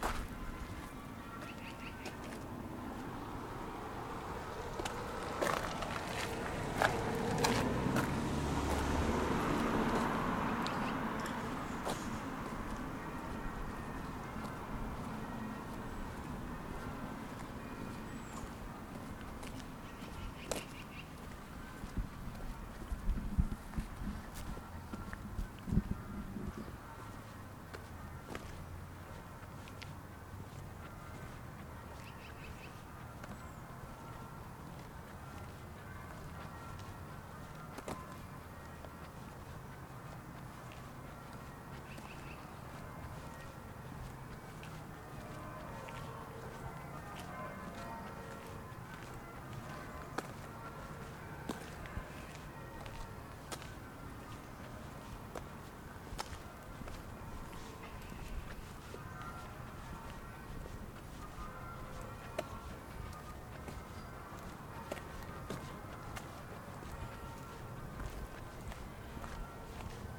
WLD: Calgary, Christ Church Bells
World Listening Day, Christ Church, Calgary, Handbells, bells, soundscape